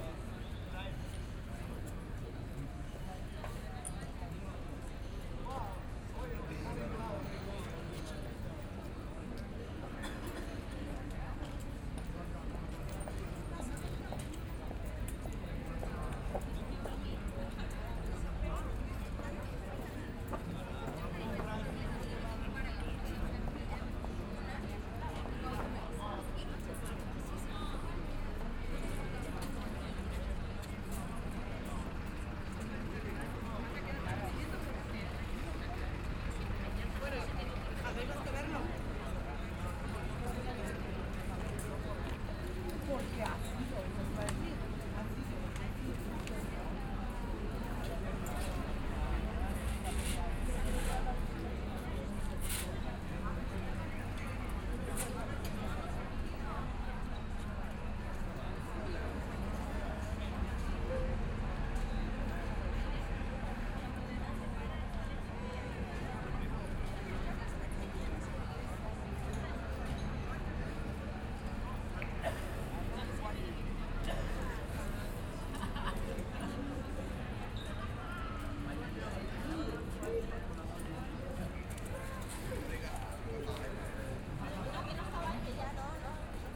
Barcelona: Plaza George Orwell
Recorded at Plaza George Orwell in Barcelona, a square famous for its surveillance cameras. It seems a joke, but its real.